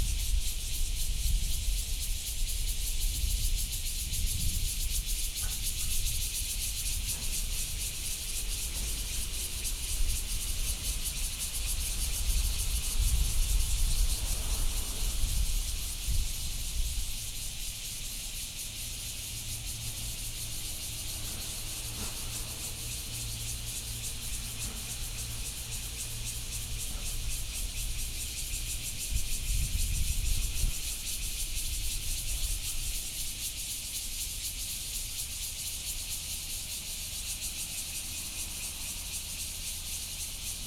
Fugang, Yangmei City, Taoyuan County - Hot noon
In a disused factory, Cicadas., Train traveling through, Distant thunder hit, Sony PCM D50 + Soundman OKM II
Taoyuan County, Taiwan, 14 August 2013